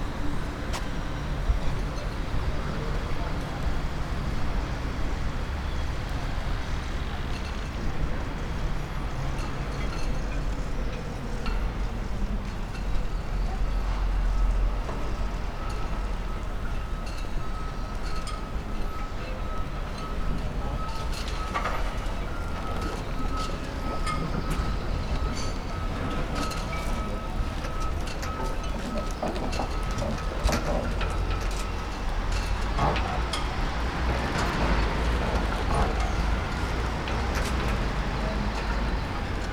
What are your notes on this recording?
(bianaural) marina is packed with boats and yachts. the steel wires pat on the masts and the boats squeak and creack when they rub against the jetties. some activity in the restaurant in the marina. in the background very distinct sound of Funchal, roaring engines of old Volvo buses.